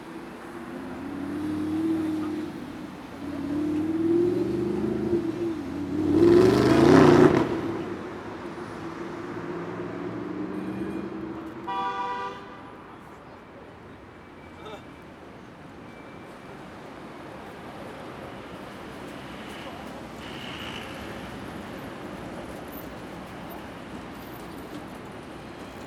Vienna, Schwartzenbergplatz
Traffic noise of trucks, cars, police, bikes and trams.
18 October 2011, ~17:00